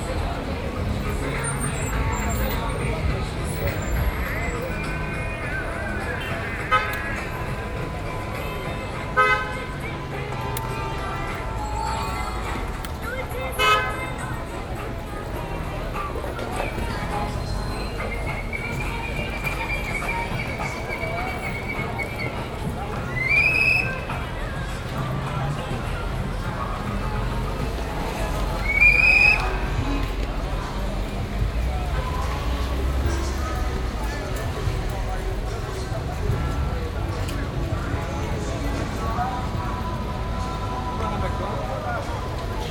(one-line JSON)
{"title": "Sao Paulo, walking down the street", "latitude": "-23.54", "longitude": "-46.63", "altitude": "742", "timezone": "Europe/Berlin"}